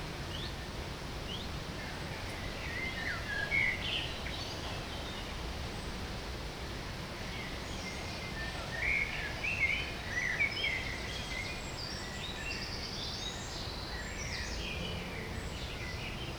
In den Fürstengärten, Paderborn, Deutschland - Paderinsel ueber Wasser
A hidden place
An island in the river
the city is all around
still
the river is listening
to what is thrown into it
to people long ago
and far away
to the one
who came
to listen alongside
even
to you
Nordrhein-Westfalen, Deutschland, 10 July 2020